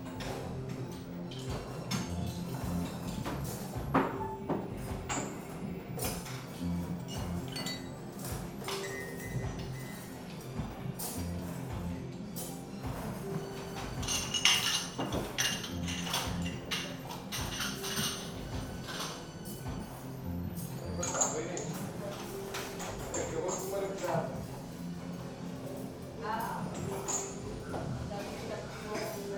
cafe in jardim da estrela, closing time
Lisbon, Portugal